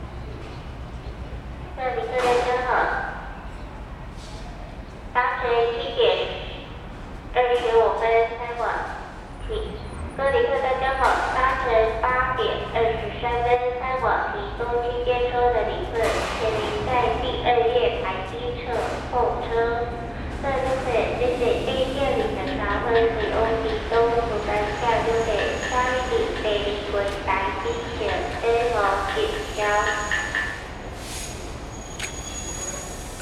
Kaohsiung Station - Broadcasting
Station broadcast messages, Sony ECM-MS907, Sony Hi-MD MZ-RH1
高雄市 (Kaohsiung City), 中華民國, 3 March 2012, ~08:00